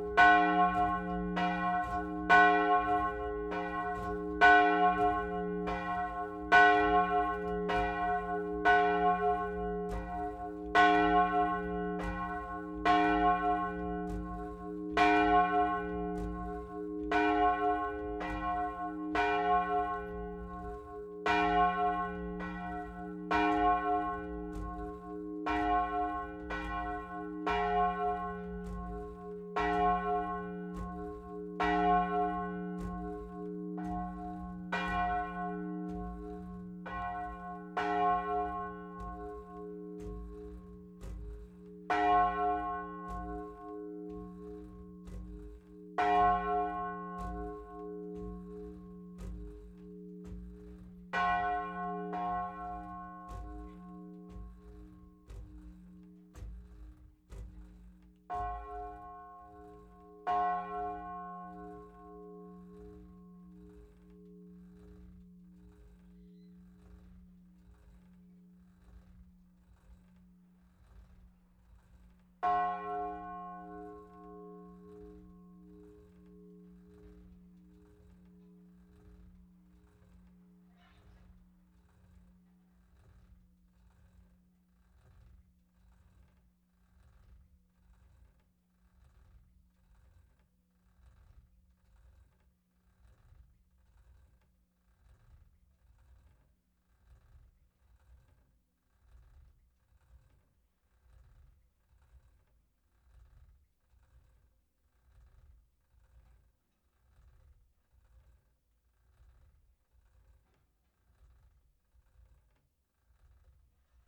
Rte de Roubaix, Lecelles, France - Lecelles - église
Lecelles (Nord)
église - Volée automatisée - Cloche aigüe